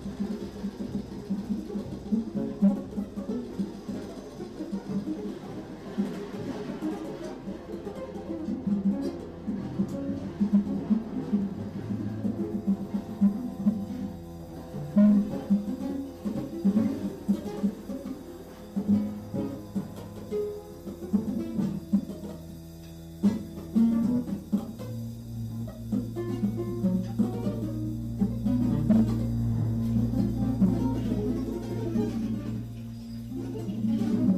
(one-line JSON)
{"title": "Gelegenheiten, rupp et al. am 20.03.2009", "latitude": "52.48", "longitude": "13.44", "altitude": "43", "timezone": "GMT+1"}